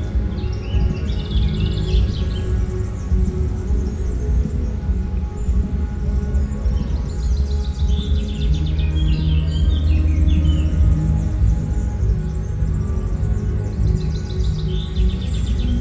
Au bord du Sierroz, essais de la sono du festival Musilac sur l'esplanade du lac du Bourget, avant cinq soirées fortes en décibels.